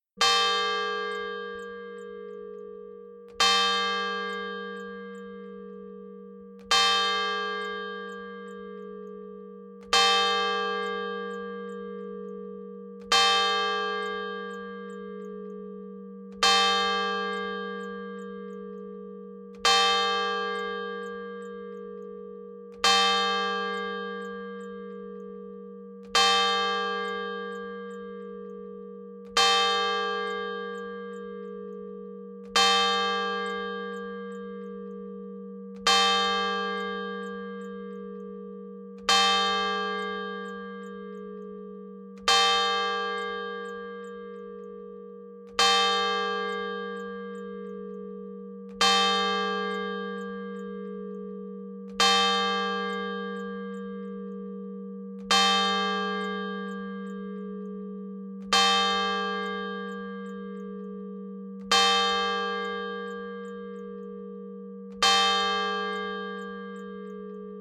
Rue Jean Jaurès, Haspres, France - Haspres - Département du Nord église St Hugues et St Achere - Tintements cloche Aîgüe.
Haspres - Département du Nord
église St Hugues et St Achere
Tintements cloche Aîgüe.